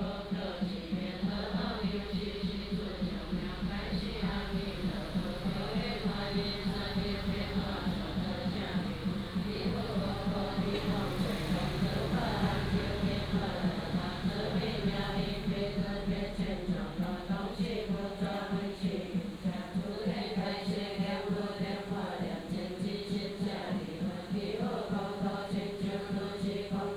碧雲寺, Hsiao Liouciou Island - In the temple
In the temple